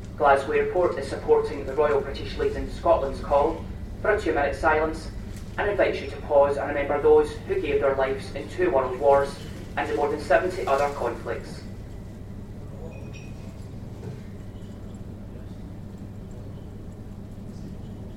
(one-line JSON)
{"title": "Glasgow International Airport (GLA), Paisley, Renfrewshire, Verenigd Koninkrijk - 11-11-11 memorial day", "date": "2007-11-11 11:00:00", "description": "2 minutes silence at Glasgow airport to remember the end of the first world war", "latitude": "55.86", "longitude": "-4.44", "altitude": "6", "timezone": "Europe/London"}